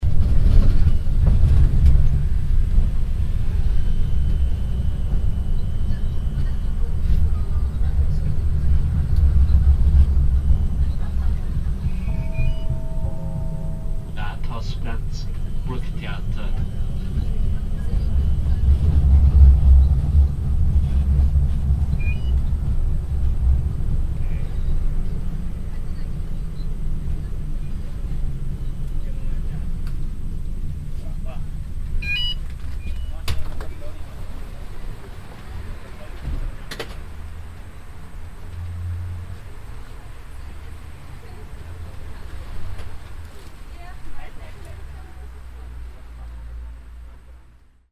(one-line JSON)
{"title": "vienna, rathausplatz, tram - wien, rathausplatz, strassenbahn", "date": "2008-05-20 23:40:00", "description": "international city scapes - social ambiences and topographic field recordings", "latitude": "48.21", "longitude": "16.36", "altitude": "187", "timezone": "Europe/Berlin"}